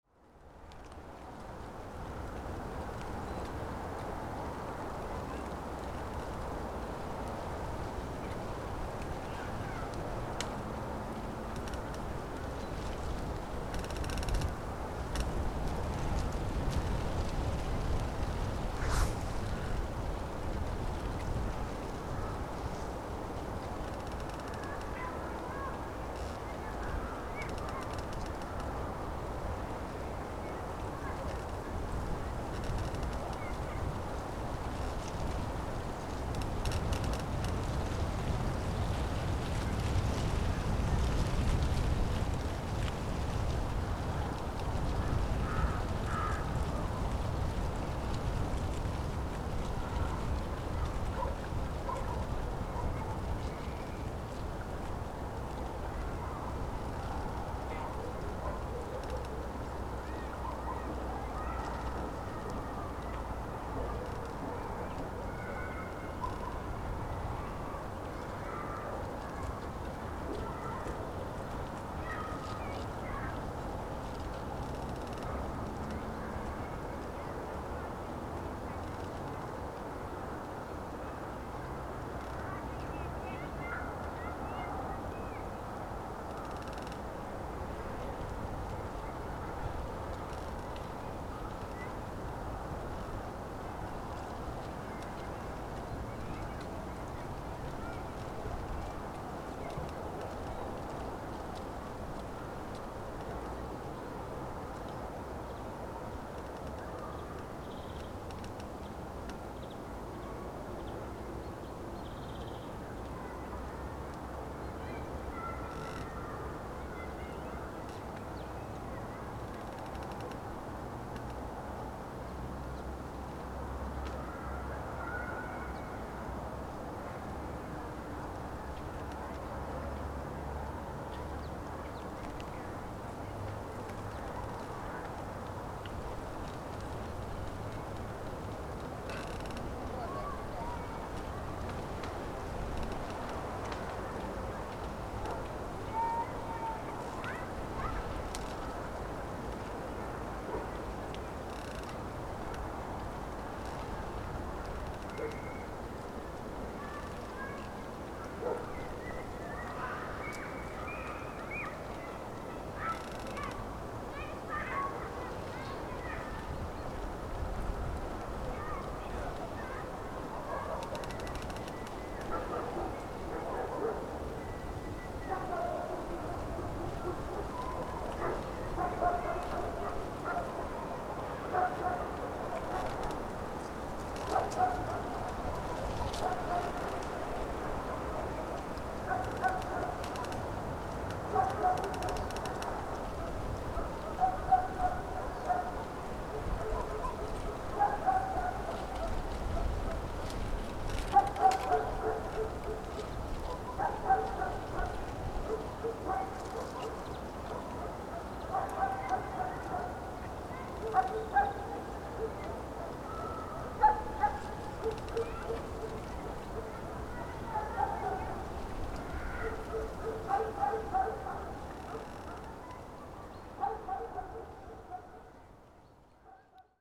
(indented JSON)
{
  "title": "Lithuania, Utena, mike pointed to the town...",
  "date": "2011-03-31 11:40:00",
  "description": "just stood on the highest place amongst the pine trees and pointed recorder to the town across the park",
  "latitude": "55.50",
  "longitude": "25.60",
  "altitude": "108",
  "timezone": "Europe/Vilnius"
}